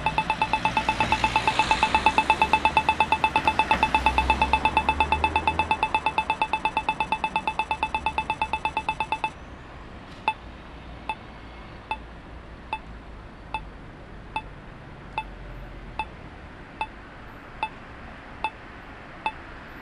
Aalst, België - Red light
A red light regulates the traffic for blind people.